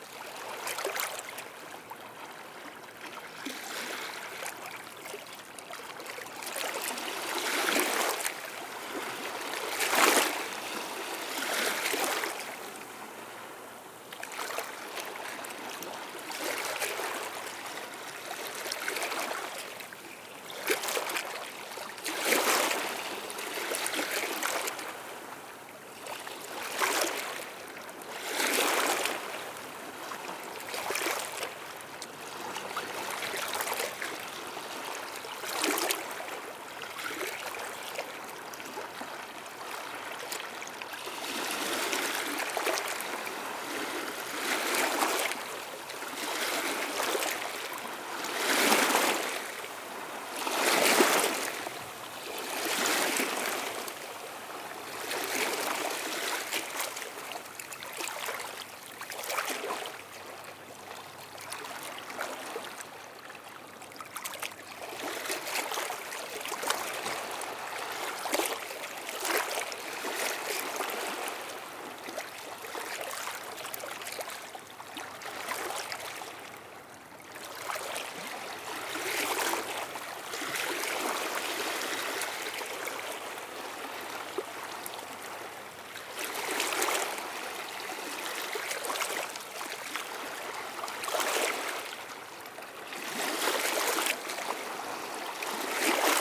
Rivedoux-Plage, France - The sea
Sound of the sea at the quiet Rivedoux beach.
19 May 2018, 17:00